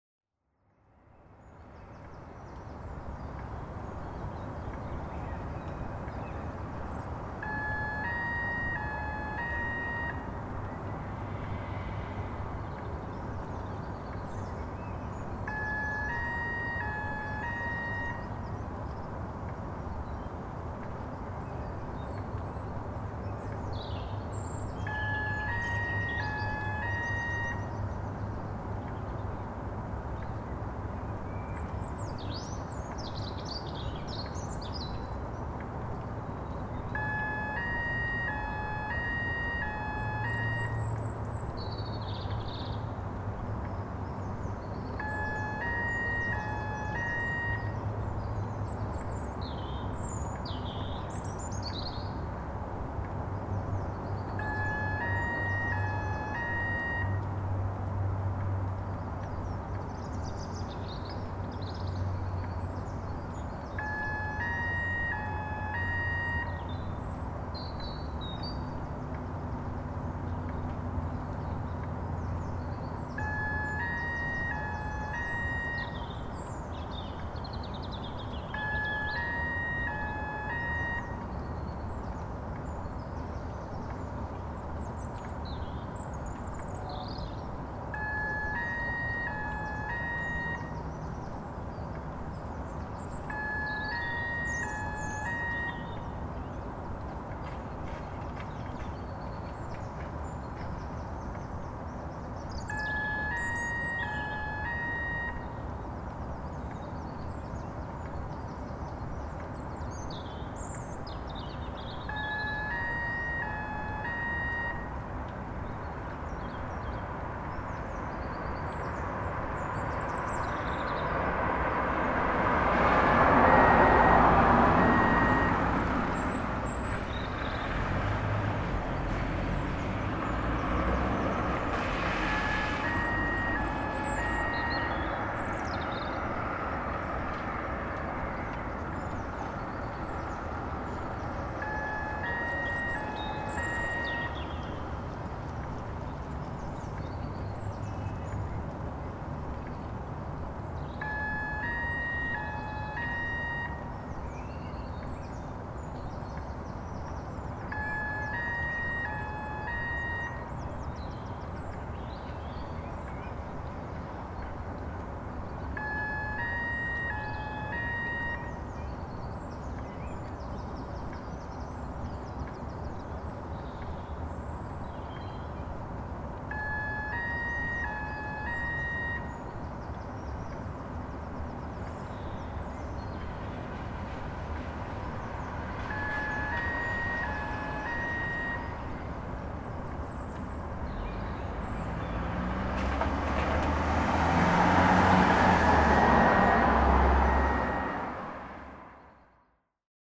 North Manchester - 7 Hours Later Alarm Still Bleeping
An alarm still ringing over 7 hours later.